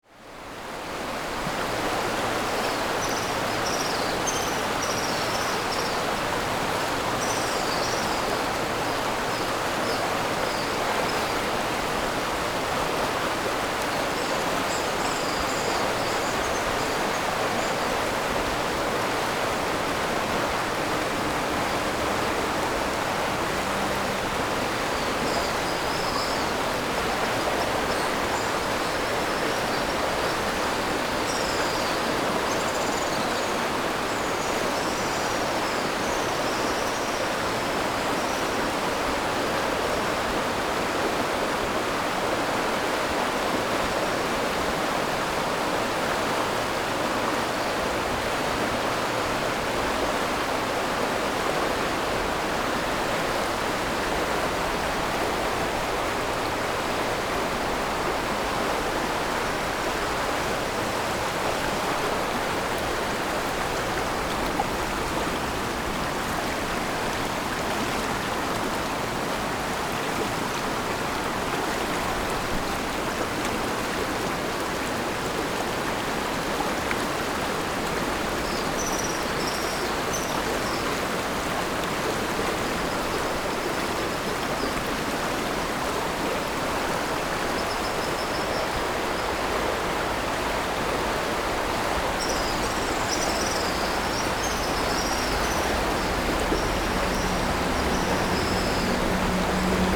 安農溪, 三星鄉大隱村 - Under the bridge
Streams and swallows, Stream after Typhoon, Traffic Sound, Under the bridge
Zoom H6 MS+ Rode NT4